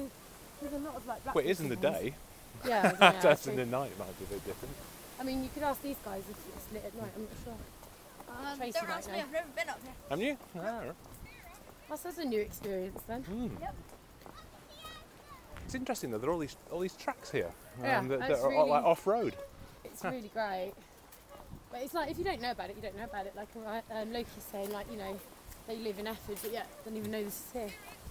Efford Walk Two: About Roman path - About Roman path